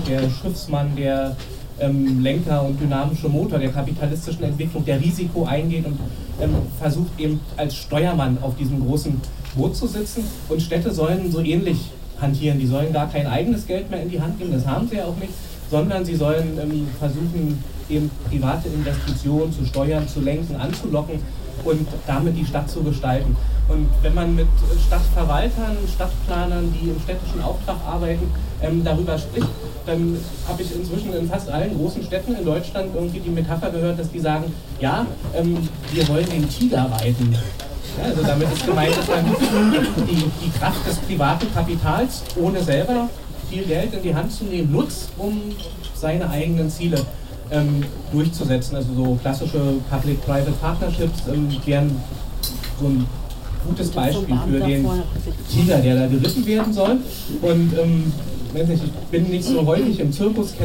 Dr. Andrej Holm. In welcher Stadt wollen wir leben? 17.11.2009. - Gängeviertel Diskussionsreihe. Teil 1
„Die Stadt gehört ja eigentlich allen“ mit:
- Dr. Andrej Holm / Institut für Humangeographie Goethe-Universität FFM
- Prof. Dr. Ingrid Breckner / Stadt- und Regionalsoziologie HCU-Hamburg
- Christoph Schäfer / Park Fiction, Es regnet Kaviar, Hamburg
- Moderation: Ole Frahm / FSK, Hamburg
Hamburg, Germany